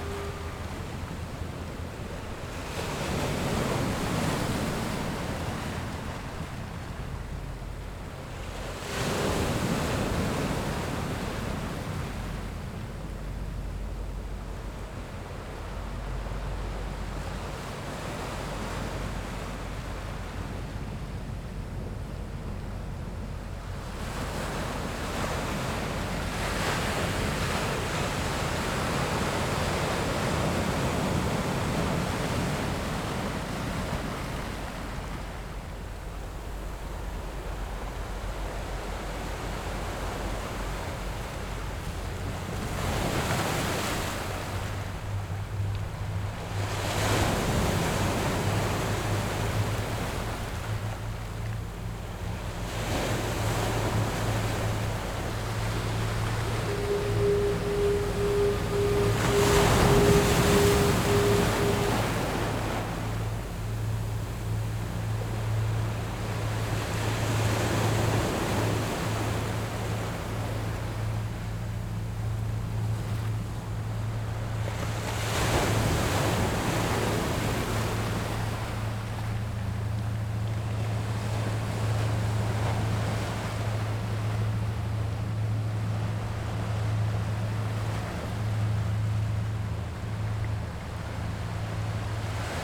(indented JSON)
{
  "title": "富岡港, Taitung City - nearby fishing port",
  "date": "2014-09-06 09:11:00",
  "description": "Sound of the waves, At the seaside, In the nearby fishing port, The yacht's whistle, Fighter flying through\nZoom H6 XY +Rode NT4",
  "latitude": "22.79",
  "longitude": "121.19",
  "altitude": "4",
  "timezone": "Asia/Taipei"
}